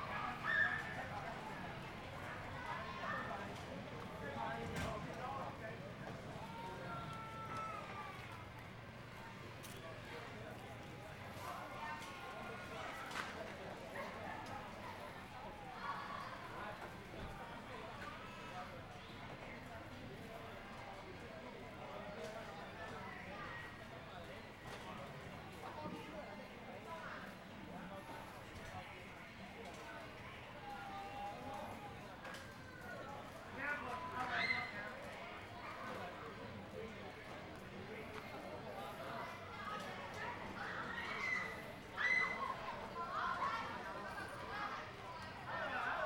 Traditional New Year, The plaza in front of the temple, Very many children are playing games, Firecrackers, Motorcycle Sound, Zoom H6 M/S
Yunlin County, Shuilin Township, 雲151鄉道